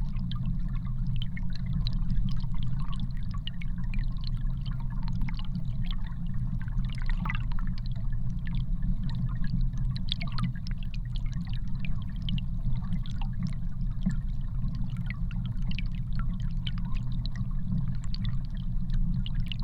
multilayered recording. piece of concrete block with naked armature in the river. contact microphones on the armature. at the same time on the same place: hydrophone in the river.
Utenos rajono savivaldybė, Utenos apskritis, Lietuva, 29 November 2019